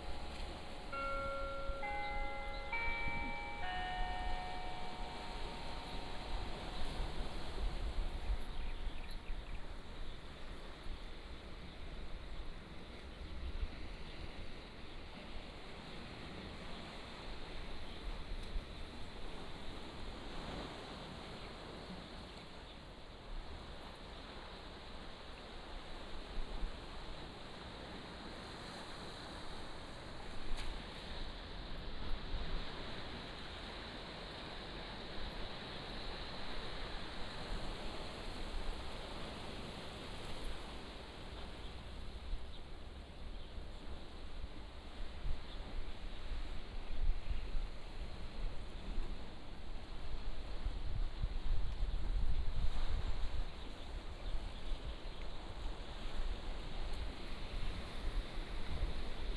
國立馬祖高級中學, Nangan Township - Next to playground
Next to playground, Aircraft flying through, Birds singing, Sound of the waves
15 October, 07:03